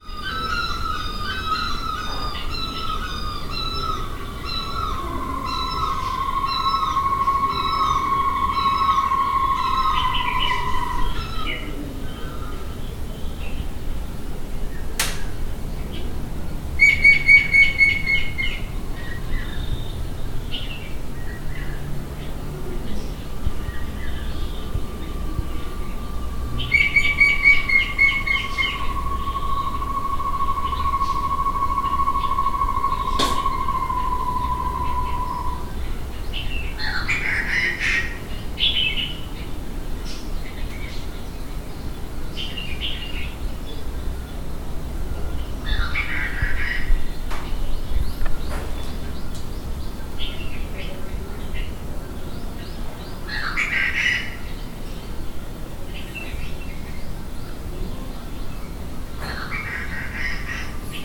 Jardin des Plantes, Caen, France - Here and there at the same place.
Inside the botanical garden, trying to be alone, recorded with the eyes closed.
11 September